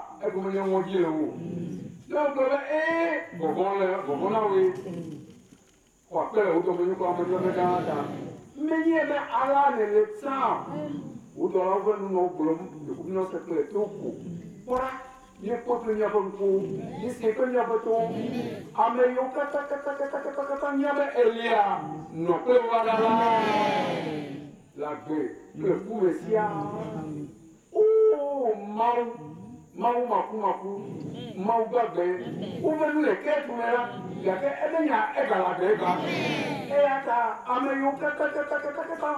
Togbe Tawiah St, Ho, Ghana - church of ARS service: Amen
church of ARS service: Amen